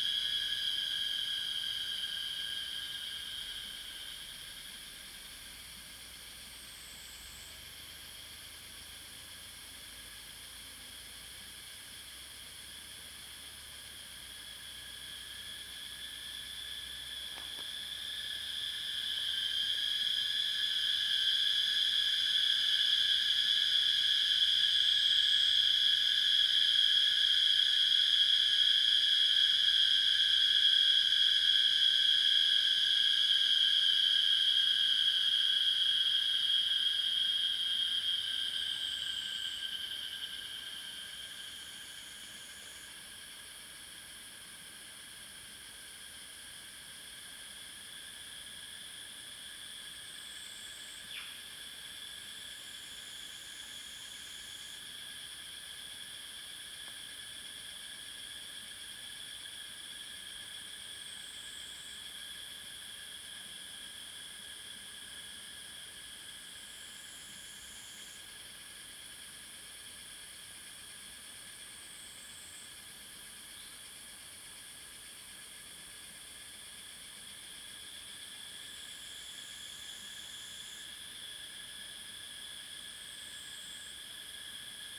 華龍巷, 五城村Nantou County - Cicada sounds
In the woods, Cicada sounds
Zoom H2n MS+XY